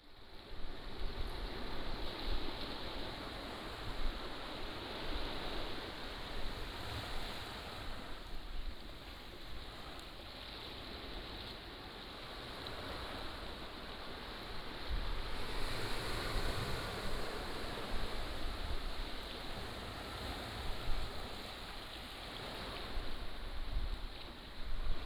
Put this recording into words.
Small port, sound of the waves